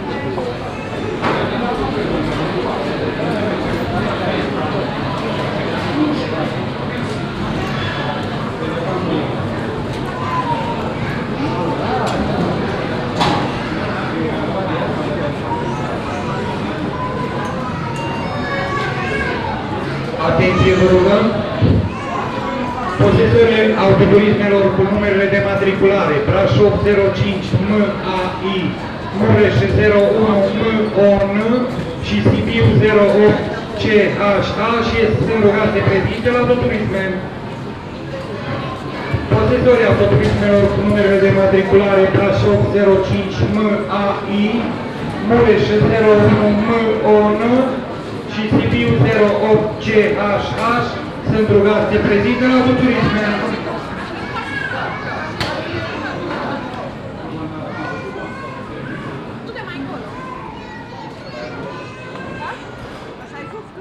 Inside the small airport Târgu Mureș at the check in. The sounds of a crowded hall filled with people standing in a queue and waiting to check in their luggage. In the end an amplified anouncement.
International city scapes - topographic field recordings and social ambiences